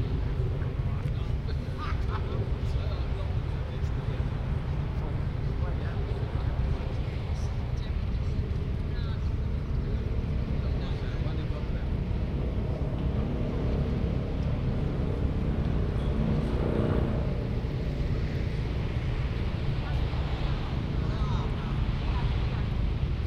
The Leas, Folkestone, Regno Unito - GG FolkestoneLeasTerrace 190524-h13-35
May 24th 2019, h 13:35. Standing on Folkestone Leas Terrace, short walking around, then walking east. Binaural recording Soundman OKMII